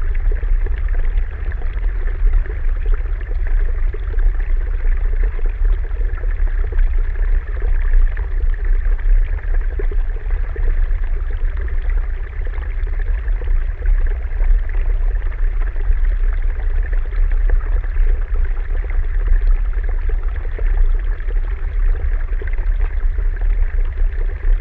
Vyzuonos, Lithuania, hydrophone in the mud
hydrophone in the mood, near water spring.